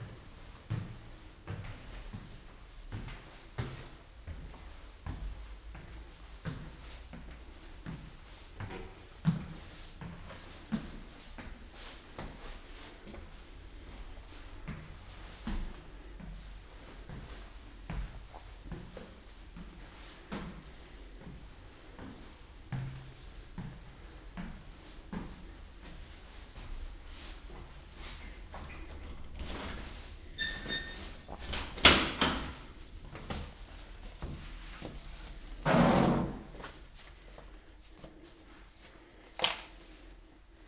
Paris soundwalks in the time of COVID-19 - Friday night walk in Paris, before curfew, in the time of COVID19: Soundwalk
"Friday night walk in Paris, before curfew, in the time of COVID19": Soundwalk
Friday, October 16th 2020: Paris is scarlett zone for COVID-19 pandemic.
One way trip walking from Cité de la Musique Concert Hall (Gerard Grisey concert), to airbnb flat. This evening will start COVID-19 curfew from midnight.
Start at 10:41 p.m. end at 11:42 p.m. duration 01:01:17
As binaural recording is suggested headphones listening.
Path is associated with synchronized GPS track recorded in the (kmz, kml, gpx) files downloadable here:
For same set of recording go to:
France métropolitaine, France